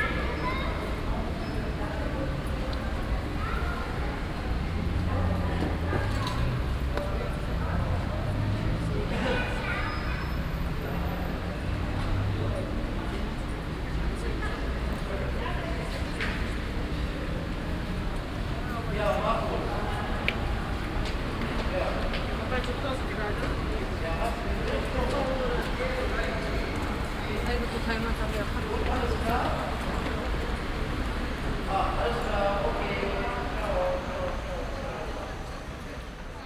soundmap nrw: social ambiences/ listen to the people - in & outdoor nearfield recordings
Cologne, Germany